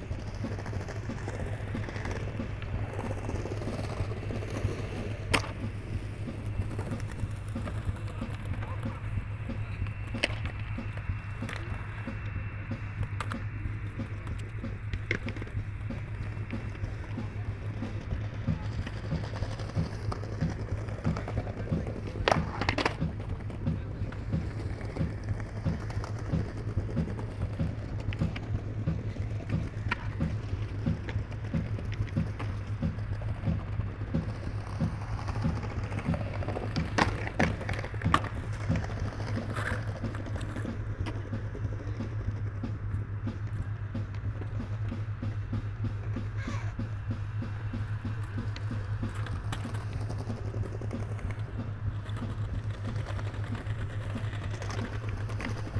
Tempelhofer Park, Berlin, Deutschland - skater park, mower at work
Skaters, music from a beatbox, a mower at work on the Tempelhof meadows
(Sony PCM D50, Primo EM172)
Germany